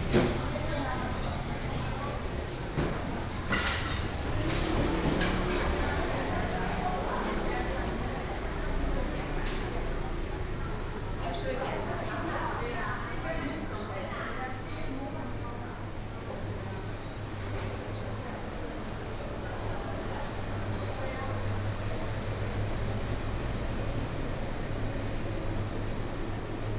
Walking in the traditional market, Traffic sound, Road construction sound
Hsinchu County, Taiwan, August 2017